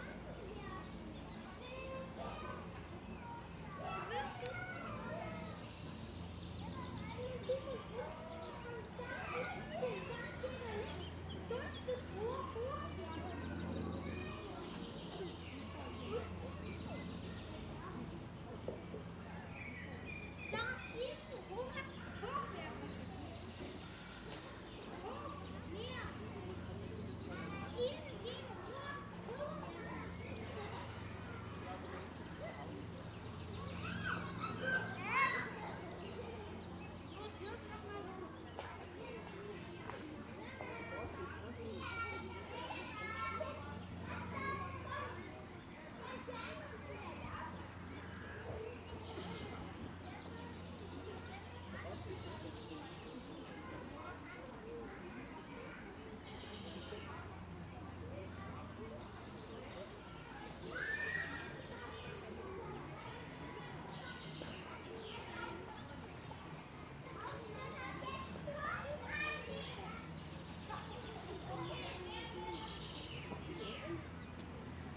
Fürstenweg, Salzburg, Österreich - Hellbrunner Park
Hellbrunner Park. Am Spielplatz.